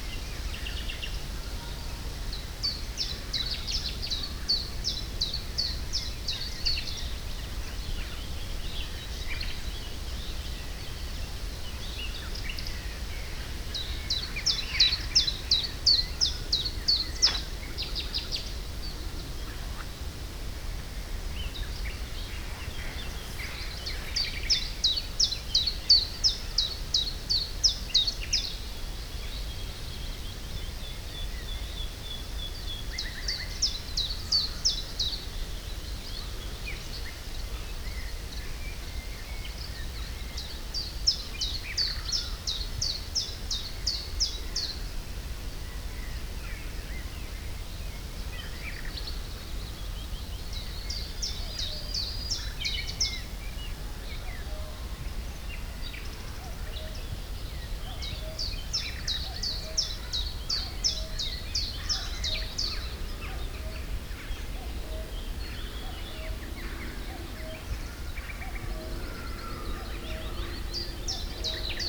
Pan van Persijn, Katwijk, Nederland - Pan van Persijn
Binaural recording. A lot of different birds (like the Cuckoo), planes, children playing, the almost white noise of leaves in the wind.
Zoom H2 recorder with SP-TFB-2 binaural microphones.
19 May, 15:30